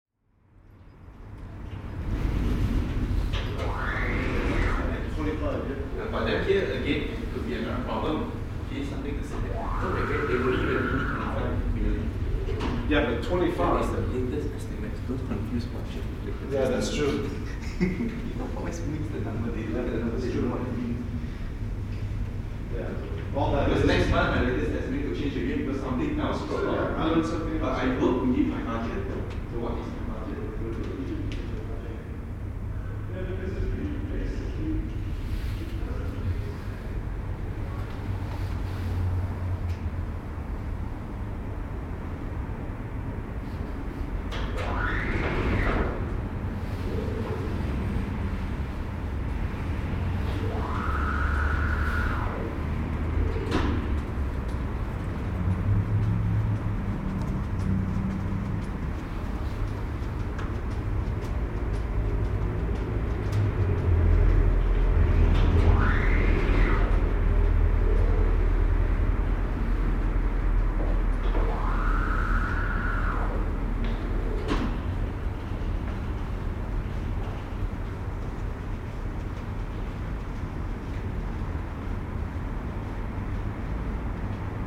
Calgary +15 Fourth & Fourth bridge
sound of the bridge on the +15 walkway Calgary